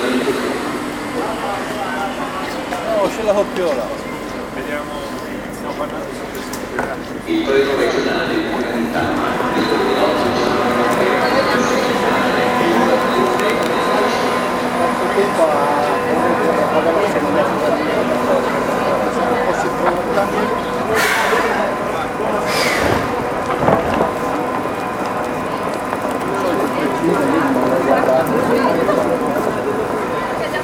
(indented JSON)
{
  "title": "SM Novella railway station, Firenze, Italy - off the train",
  "date": "2012-10-30 08:40:00",
  "description": "traing arriving at the station, jumping off and walking though the crowded station till the exit.",
  "latitude": "43.78",
  "longitude": "11.25",
  "altitude": "51",
  "timezone": "Europe/Rome"
}